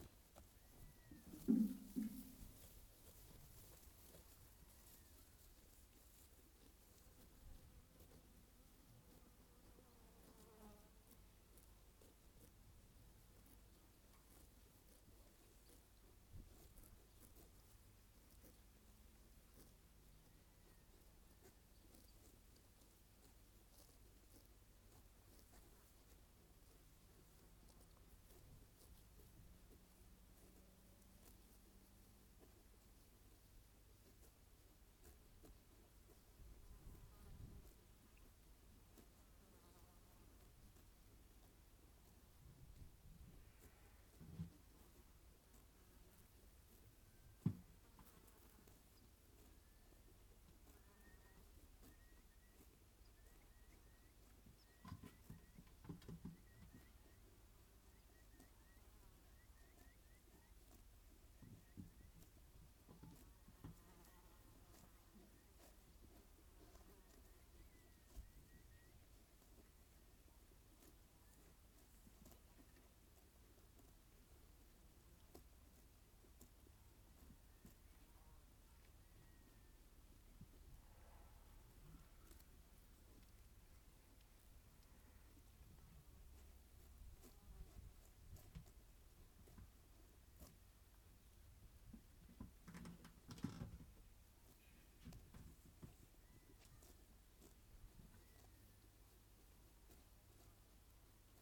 North Hamarsland, Tingwall, Shetland Islands, UK - Eavesdropping on grazing sheep
This is the sound of Pete Glanville's organic Shetland sheep grazing in their field. It is a very quiet recording, but I think that if you listen closely you can hear the sheep grazing in it. Recorded with Naint X-X microphones slung over a fence and plugged into a FOSTEX FR-2LE